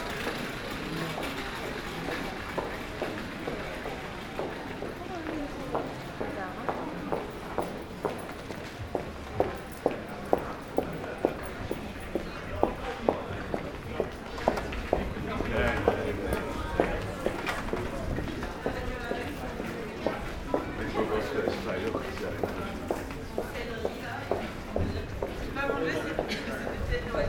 Den Haag, Nederlands - Bar terraces
Grote Markt. On a very sunny Saturday afternoon, the bar terraces are absolutely completely busy ! Happy people discussing and drinking.
30 March 2019, ~14:00